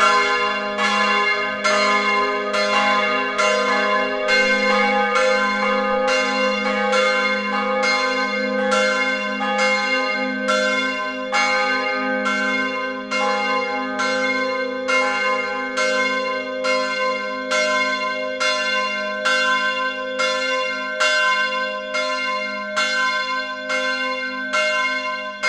Baumgartner Höhe, Wien-Penzing, Österreich - Church bells of Steinhof
Bells of the church by Otto Wagner, Angelus at 7pm; recorded with XY-90° Zoom H6